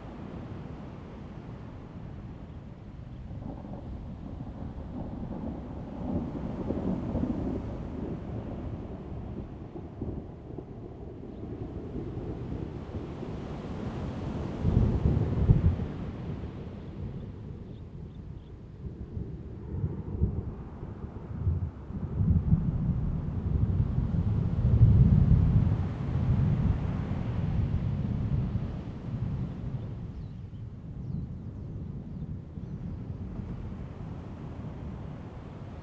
Sound of the waves, Traffic Sound, Thunder
Zoom H2n MS+XY
8 September 2014, 14:13